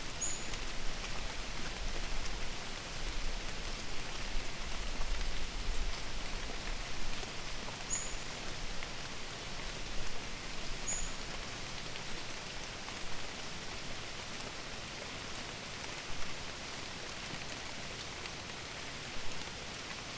neoscenes: Blue Mountains in the rain